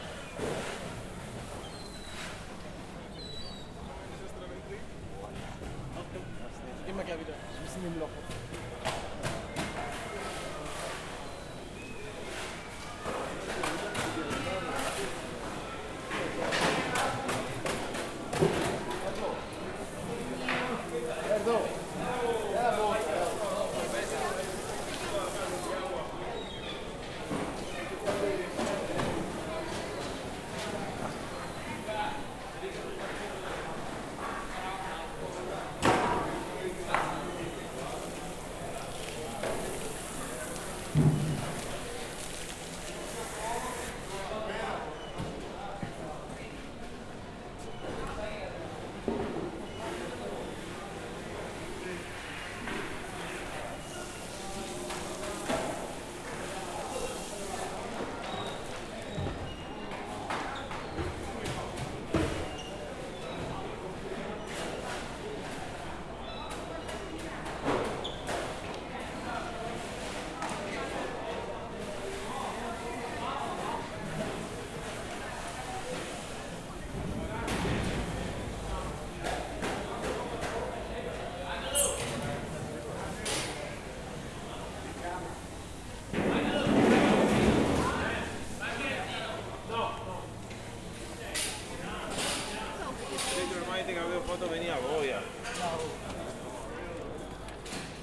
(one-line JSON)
{"title": "Venice, Italy - fish market", "date": "2012-09-17 11:23:00", "description": "busy market activities, people, seagulls", "latitude": "45.44", "longitude": "12.33", "altitude": "1", "timezone": "Europe/Rome"}